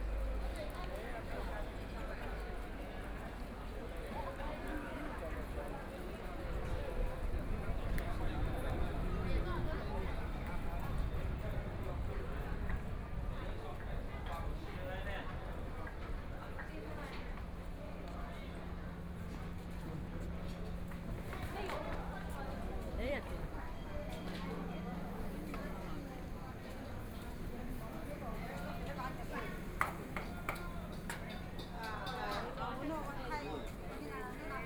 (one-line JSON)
{"title": "Wu Fu Nong, Shanghai - In the alley", "date": "2013-11-25 17:16:00", "description": "Shopping street sounds, The crowd, Mall pedestrian zone, Walking into the alley, Binaural recording, Zoom H6+ Soundman OKM II", "latitude": "31.24", "longitude": "121.48", "altitude": "19", "timezone": "Asia/Shanghai"}